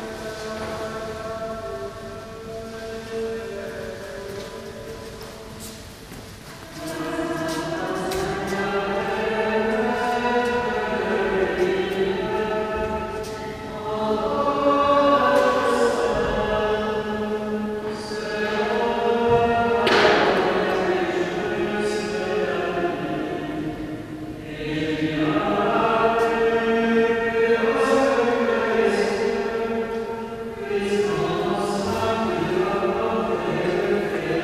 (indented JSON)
{
  "date": "2010-09-11 19:30:00",
  "description": "Chanting in the Église Saint-Gervais-Saint-Protais, Paris. Binaural recording.",
  "latitude": "48.86",
  "longitude": "2.35",
  "altitude": "39",
  "timezone": "Europe/Paris"
}